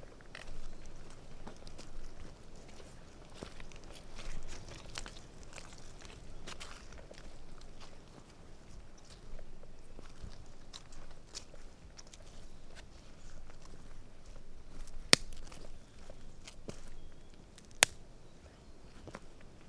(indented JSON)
{
  "title": "Near Portesham, Dorset, UK - Squelchy Twigs",
  "date": "2013-10-05 13:00:00",
  "description": "SDRLP funded by Heritage Lottery Fund",
  "latitude": "50.67",
  "longitude": "-2.58",
  "altitude": "105",
  "timezone": "Europe/London"
}